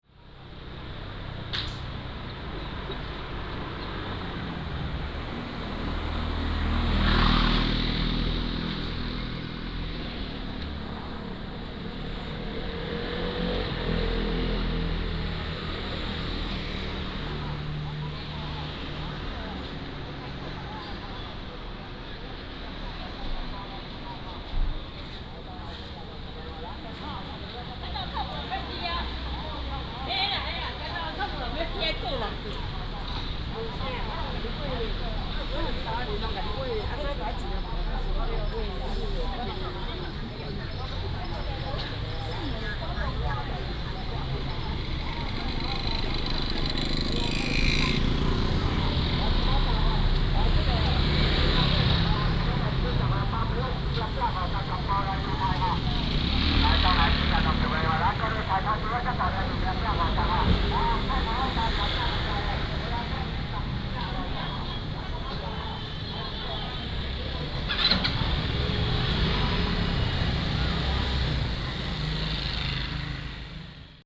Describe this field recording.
Walking in the streets of the town, Traffic sound, Vendors